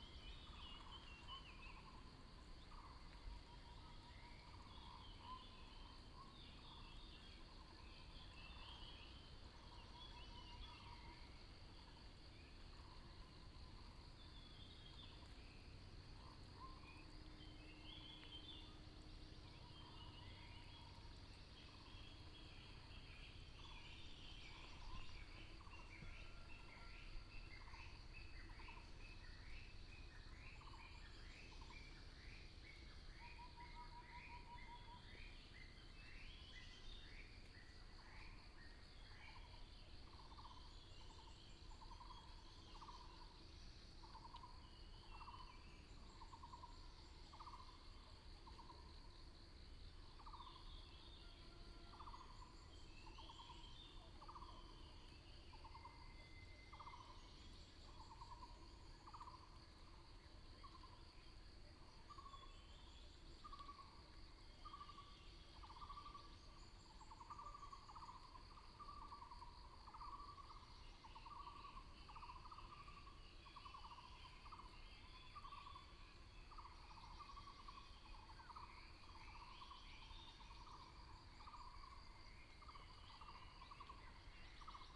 水上巷, 埔里鎮桃米里, Nantou County - early morning
Bird sounds, Crowing sounds, Morning road in the mountains